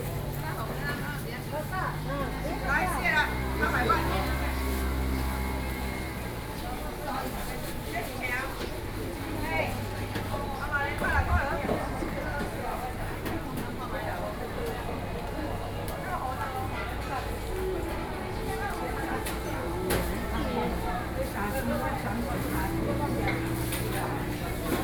Lane, Zhōngzhèng Rd, New Taipei City - Traditional markets